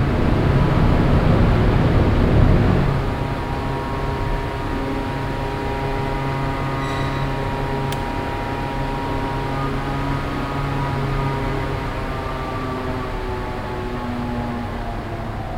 einschalten, an- und hochlaufen und abschalten der lüftungsventilatoren in der ausstellungshalle
soundmap nrw:
topographic field recordings, social ambiences